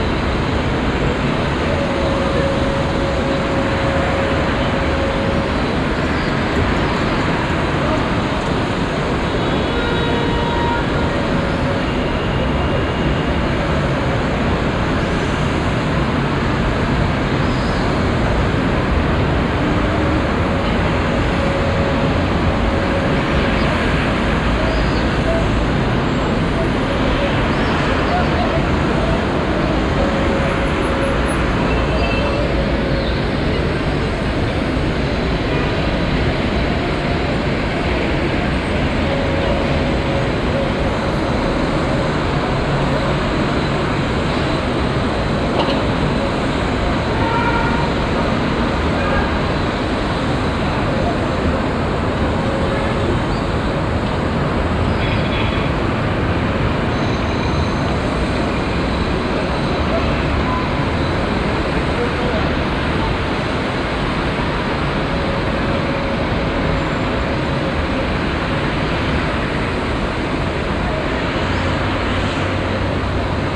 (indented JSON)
{
  "title": "Ville Nouvelle, Tunis, Tunesien - tunis, hotel roof, city night ambience",
  "date": "2012-05-04 21:30:00",
  "description": "Standing on the hotel roof facing the building- and streetscape at night. The sound of the city.\ninternational city scapes - social ambiences and topographic field recordings",
  "latitude": "36.80",
  "longitude": "10.18",
  "altitude": "13",
  "timezone": "Africa/Tunis"
}